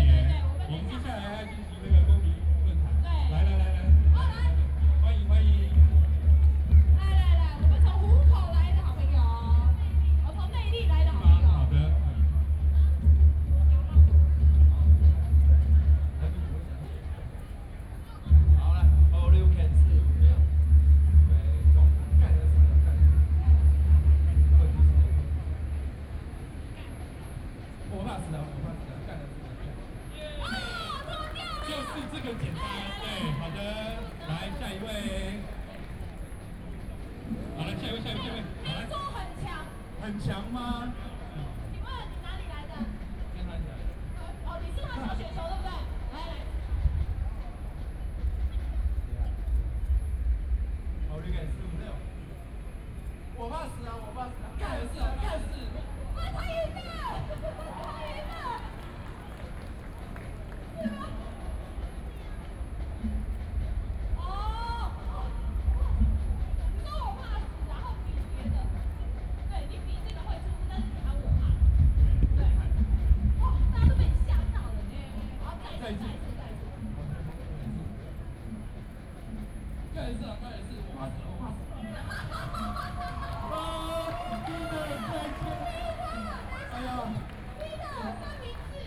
Freedom Square, Taiwan - drums
Distant drums being rehearsal, Sony PCM D50 + Soundman OKM II
台北市 (Taipei City), 中華民國